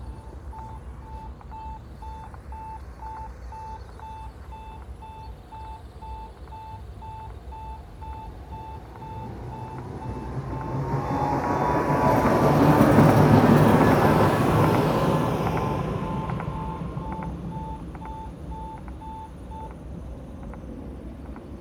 {
  "title": "Tram rumble, traffic light bleeps and clicks, cars",
  "date": "2011-11-01 15:06:00",
  "description": "Trams, bleeps and clicks. The traffic light sounds seem to react to the loudness of passing cars. Can this be so?",
  "latitude": "52.56",
  "longitude": "13.57",
  "altitude": "60",
  "timezone": "Europe/Berlin"
}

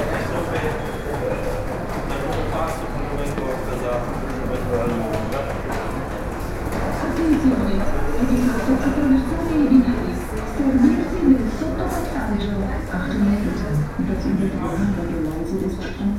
{
  "title": "Верона, Италия - Verona Porta Nova. Railway station",
  "date": "2012-12-16",
  "description": "Verona Porta Nova. Railway station. Anonsment about trains delay",
  "latitude": "45.43",
  "longitude": "10.98",
  "altitude": "66",
  "timezone": "Europe/Rome"
}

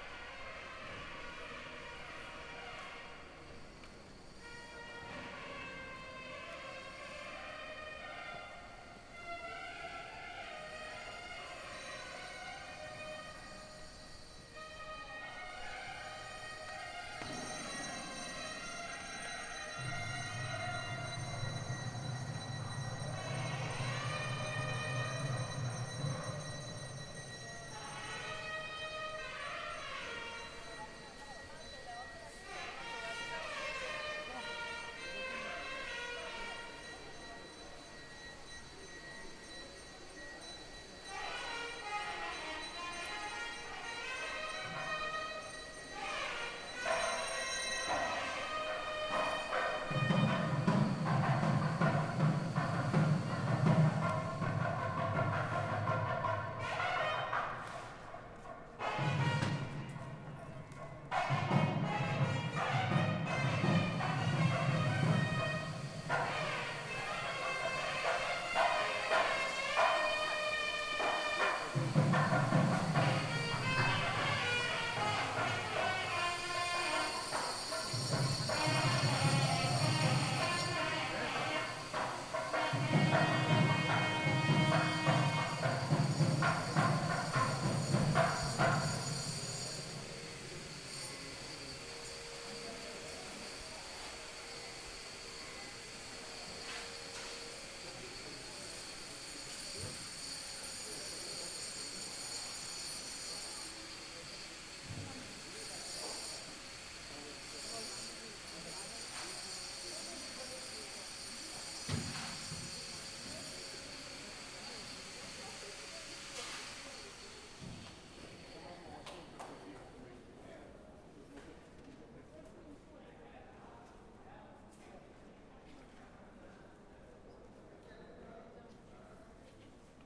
{
  "title": "Jalan Batu Caves, Taman Sunway Batu Caves, Batu Caves, Selangor, Malaysia - hindu service",
  "date": "2018-01-04 15:25:00",
  "description": "A recording getting closer and closer to a musical hindu service, whilst construction occurs around them",
  "latitude": "3.24",
  "longitude": "101.68",
  "altitude": "83",
  "timezone": "Asia/Kuala_Lumpur"
}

